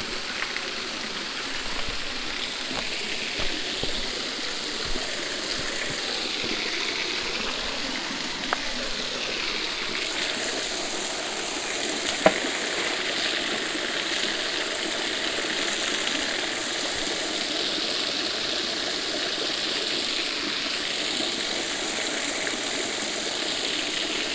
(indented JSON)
{
  "title": "caprauna, cascades de fereira, waterfall",
  "date": "2009-07-27 13:50:00",
  "description": "a beautiful mid size water fall, here with less water as recorded in the summer\nsoundmap international: social ambiences/ listen to the people in & outdoor topographic field recordings",
  "latitude": "44.11",
  "longitude": "7.98",
  "altitude": "788",
  "timezone": "Europe/Berlin"
}